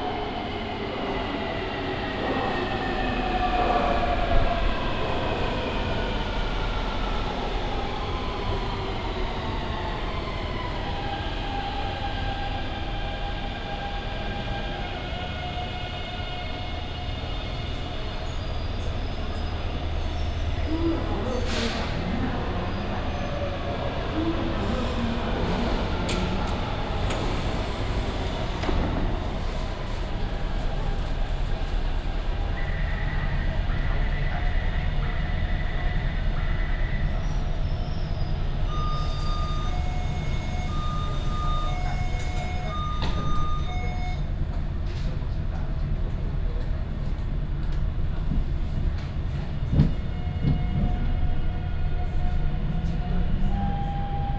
Banqiao Station, Banqiao District, New Taipei City - In MRT station platform
In MRT station platform